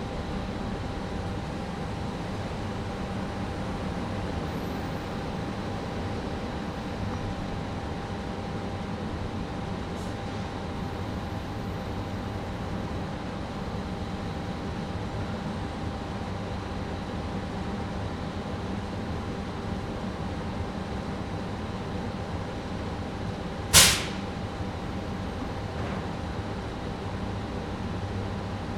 Recorded with Zoom H6 under bridge along the canal across from the steam releasing factory.
Huddersfield, UK, 7 February 2018, 16:00